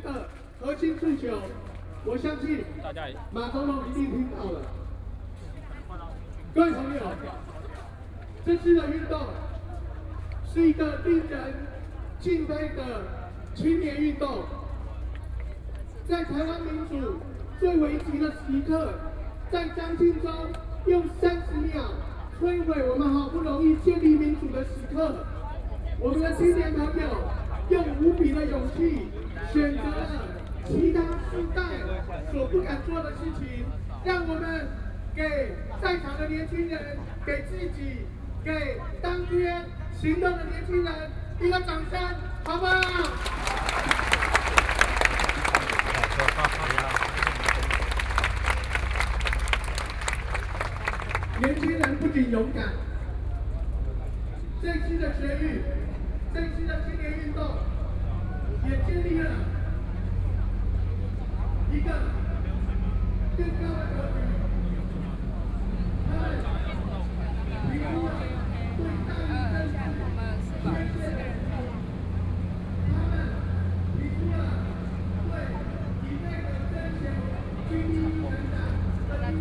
Ketagalan Blvd., Taipei City - In the back of the stage
In the back of the stage, Walking through the site in protest, People cheering, Nearby streets are packed with all the people participating in the protest, The number of people participating in protests over Half a million
Binaural recordings, Sony PCM D100 + Soundman OKM II